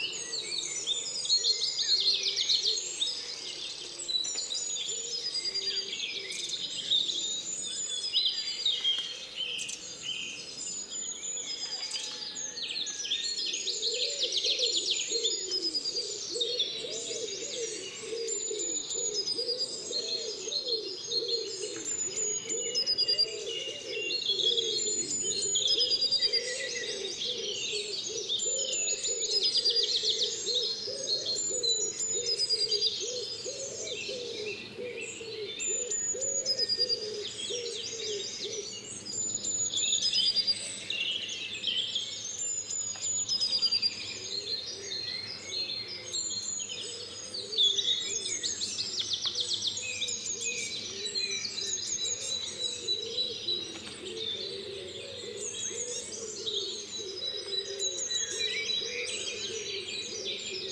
{
  "title": "Prospect, Box, Corsham, UK - Dawn Chorus",
  "date": "2017-04-14 05:56:00",
  "description": "Binaural recording of dawn chorus on a windless sunny morning. Using Zoom H5 recorder with Luhd PM-01 Binaural in-ear microphones.",
  "latitude": "51.41",
  "longitude": "-2.26",
  "altitude": "138",
  "timezone": "Europe/London"
}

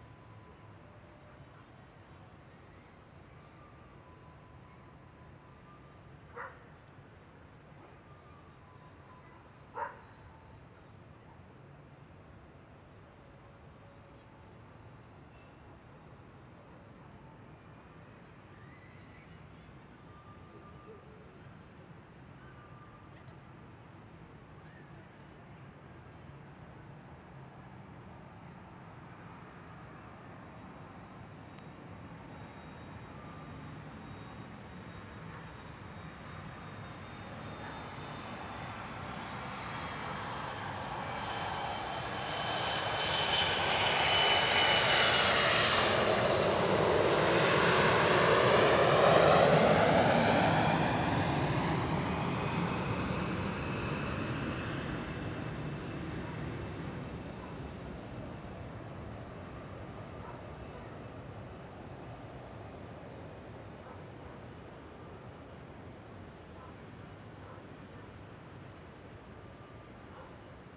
{
  "title": "臺北市立兒童育樂中心, Taipei City - Aircraft flying through",
  "date": "2014-02-17 20:28:00",
  "description": "Aircraft flying through, Dogs barking, Traffic Sound, People walking in the park\nPlease turn up the volume a little\nZoom H6, M/S",
  "latitude": "25.07",
  "longitude": "121.52",
  "timezone": "Asia/Taipei"
}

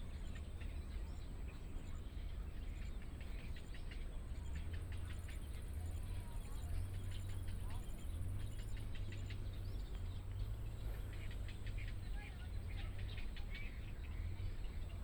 {
  "title": "羅東林業文化園區, Luodong Township - birdsong",
  "date": "2014-07-28 10:34:00",
  "description": "in the Park, Tourist, The sound of birdsong, Trains traveling through",
  "latitude": "24.68",
  "longitude": "121.77",
  "altitude": "7",
  "timezone": "Asia/Taipei"
}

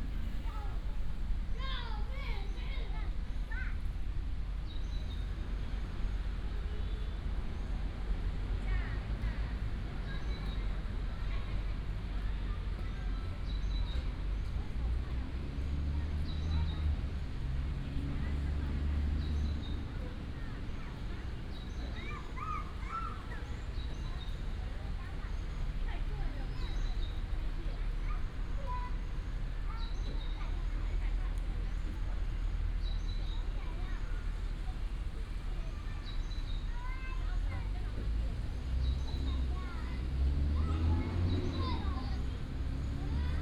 {
  "title": "青年公園, Taipei City - in the Park",
  "date": "2017-04-28 15:20:00",
  "description": "in the Park, traffic sound, bird sound, Children's play area",
  "latitude": "25.03",
  "longitude": "121.51",
  "altitude": "17",
  "timezone": "Asia/Taipei"
}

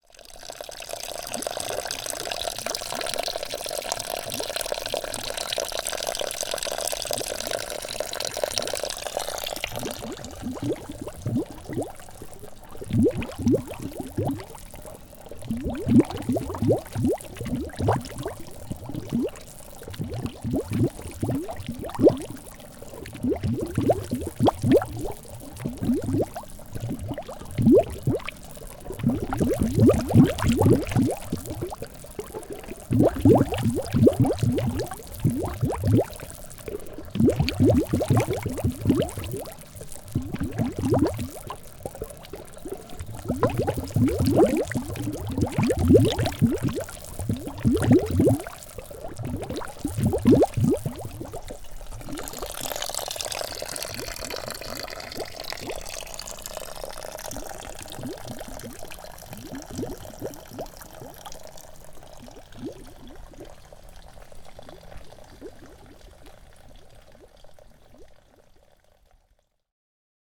{
  "title": "Chem. de la Carrière, Saint-Jean-d'Arvey, France - Abreuvoir",
  "date": "2014-03-14 11:30:00",
  "description": "Glouglous dans un abreuvoir au bord du chemin.",
  "latitude": "45.60",
  "longitude": "5.97",
  "altitude": "721",
  "timezone": "Europe/Paris"
}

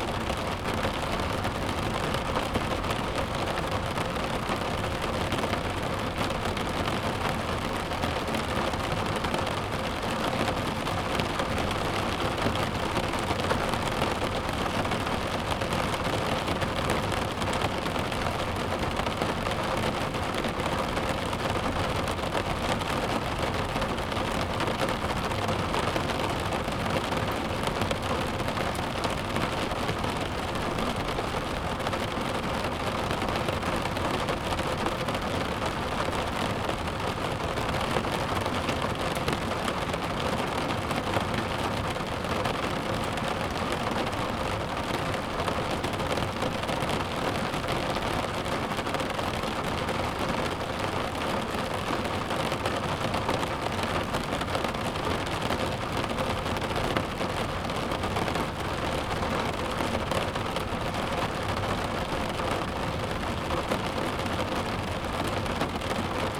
{
  "title": "Praia do Pisão - Santa Cruz - Heavy rain at the beach",
  "date": "2020-12-16 09:00:00",
  "description": "Heavy rain recorded inside the car parked close the beach.\nRecorded with a Tascam DR-40X internal mics on AB.",
  "latitude": "39.14",
  "longitude": "-9.38",
  "altitude": "30",
  "timezone": "Europe/Lisbon"
}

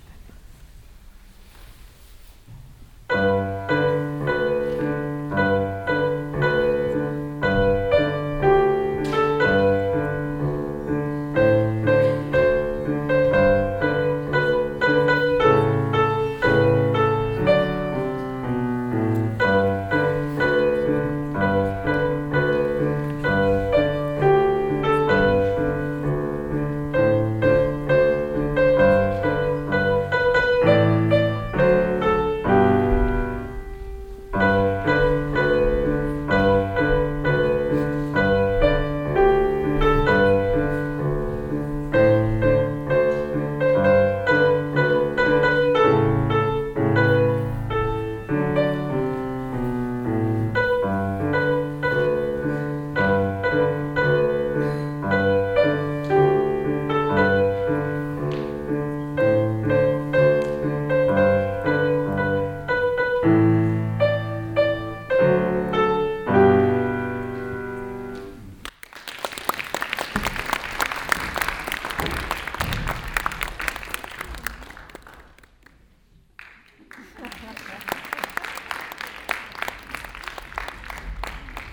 {
  "title": "refrath, waldorfschule, aula, vorspiel - refrath, waldorfschule, aula, vorspiel 05",
  "description": "alljährliches klavier vorspiel der Klavierschüler in der schulaula.hier: die weihnachtslieder auswahl\nsoundmap nrw - weihnachts special - der ganz normale wahnsinn\nsocial ambiences/ listen to the people - in & outdoor nearfield recordings",
  "latitude": "50.96",
  "longitude": "7.11",
  "altitude": "74",
  "timezone": "GMT+1"
}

